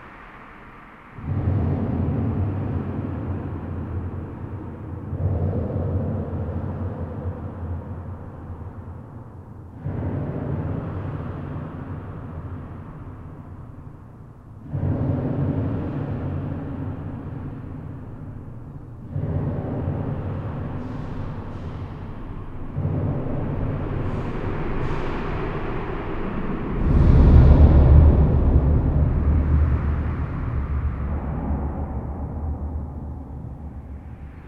Theux, Belgium - Inside the bridge, welcome to hell
Recording of the technical tunnel of the Polleur bridge : I'm not on the motorway but below, not on the bridge but inside. Reverb makes very noisy and unpleasant low-pitched explosions. It's a foretaste of hell, in particular with trucks shelling. 8:35 mn, will we survive to the truck ? This bridge is entirely made of steel and it's just about my favorite places. Let's go to die now, bombing raid hang over.